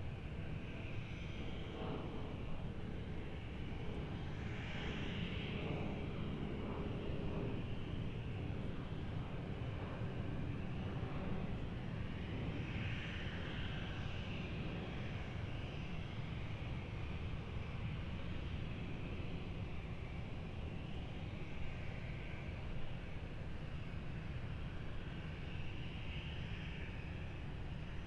MSP Spotters Park - 30L Operations from the Spotters Park July 4, 2022
A nice warm summer Independence Day evening at the Minneapolis/St Paul International Airport Spotters Park. Planes were landing and taking off on 30L (The close runway) 30R and takeoffs on 17 at the time.
4 July 2022, 19:54